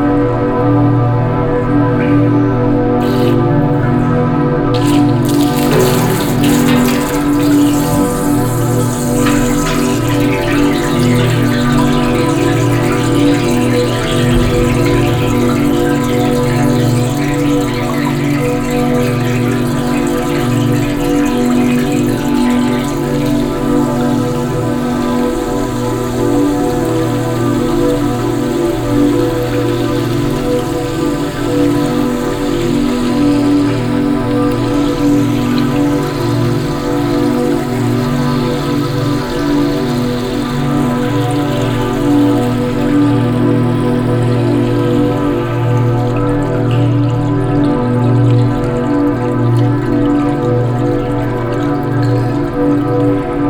Gladbach, Mönchengladbach, Deutschland - mönchengladbach, alter markt, city church
Inside the old city church. The sound of evening bells coming from outside into the church hall accompanied by water sounds and finally a tune played on a bottle glass instrument.
soundmap nrw - social ambiences, art places and topographic field recordings